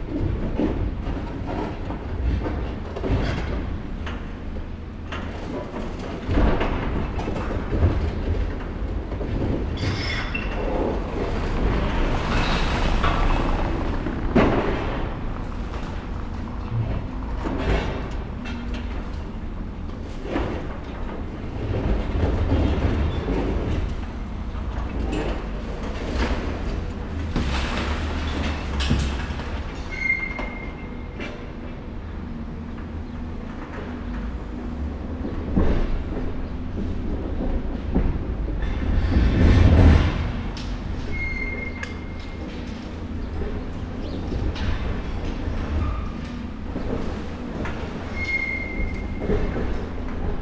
berlin: nansenstraße - the city, the country & me: demolition of a warehouse
demolition of a warehouse, excavator with grab breaks up parts of the building
the city, the country & me: march 2, 2016